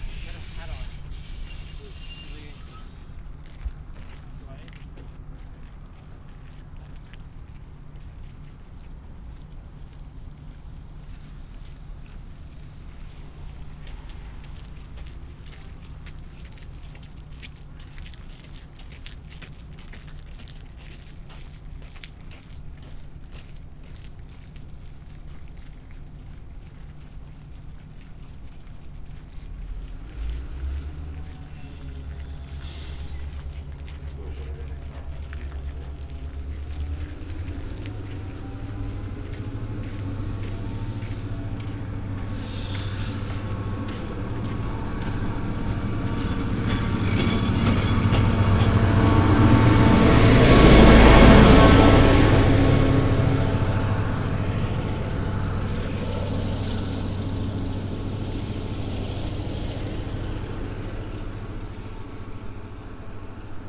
Train arrival and departure in winter.